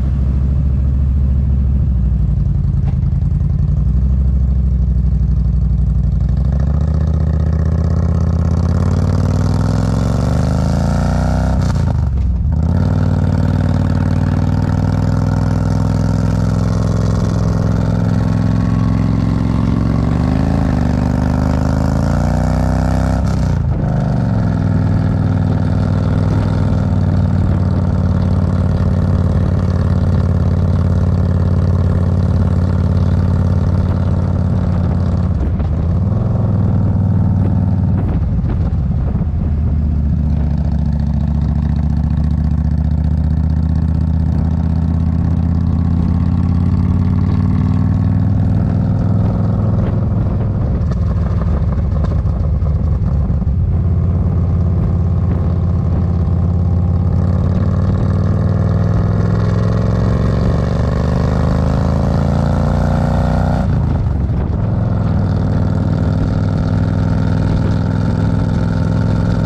{"title": "The Circuit Office, Oliver's Mount, Olivers Mount, Scarborough, UK - a lap of oliver's mount ...", "date": "2022-08-12 14:45:00", "description": "a lap of oliver's mount ... on a yamaha xvs 950 evening star ... go pro mounted on sissy bar ... re-recorded from mp4 track ...", "latitude": "54.26", "longitude": "-0.41", "altitude": "95", "timezone": "Europe/London"}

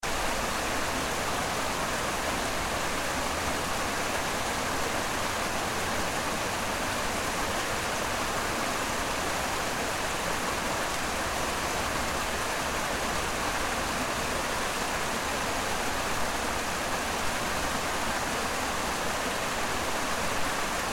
{"title": "Tapton Lock, Chesterfield", "description": "Running water from outfall of the divert round Tapton Lock in Chesterfield", "latitude": "53.25", "longitude": "-1.42", "altitude": "71", "timezone": "Europe/London"}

